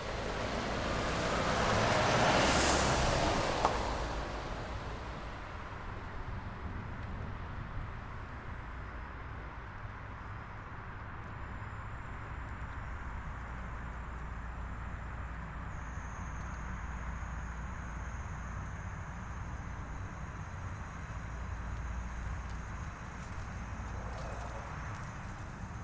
Petroleumhavenweg, Amsterdam, Nederland - Wasted Sound Alkion
With the wasted sounds project I am searching for sounds that are unheard or considered as noise.
Noord-Holland, Nederland, 6 November 2019